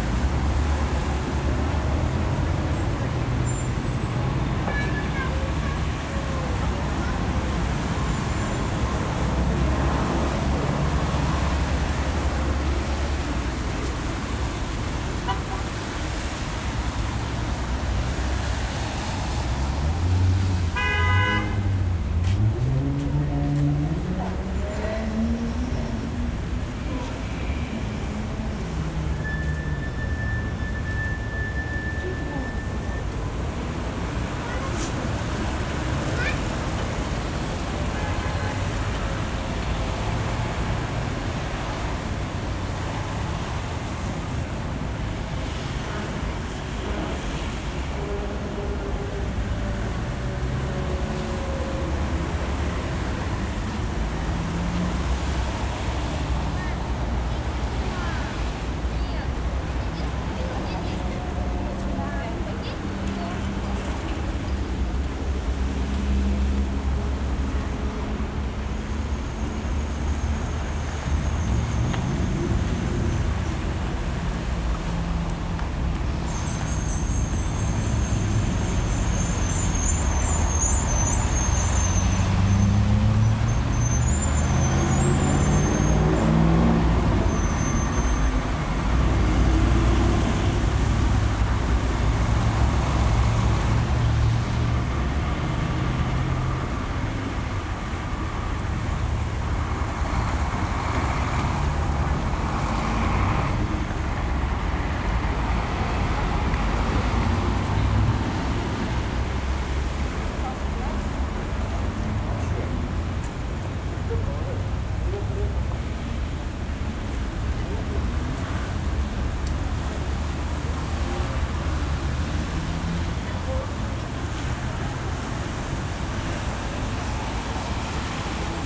noise of the street, street intersection Lomonosova - Truda
Перекресток ул. Ломоносова и пр. Труда